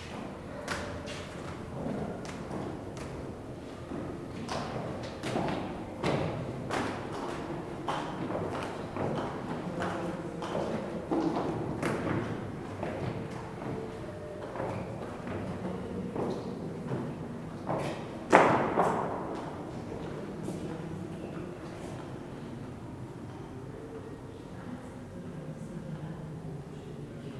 Palais des Archevêques
Captation : ZOOMh4n